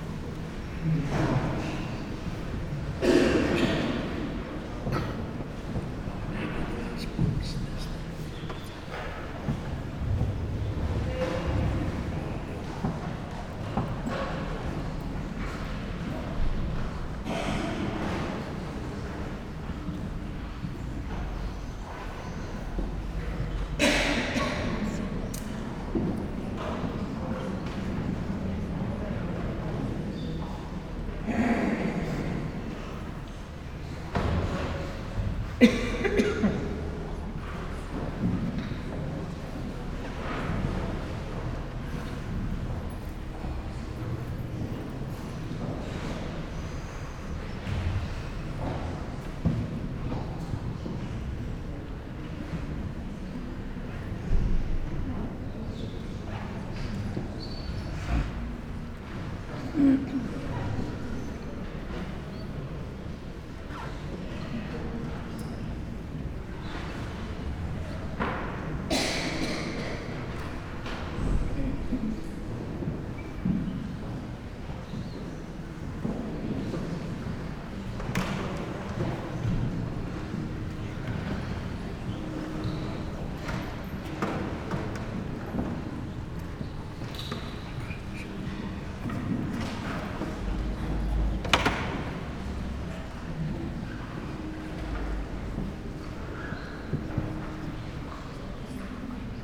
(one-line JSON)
{"title": "basilica, Novigrad, Croatia - murmur of people, prayers", "date": "2013-07-14 10:55:00", "description": "sonic scape while people gather, wooden benches, coughs, snuffle ...", "latitude": "45.32", "longitude": "13.56", "altitude": "3", "timezone": "Europe/Zagreb"}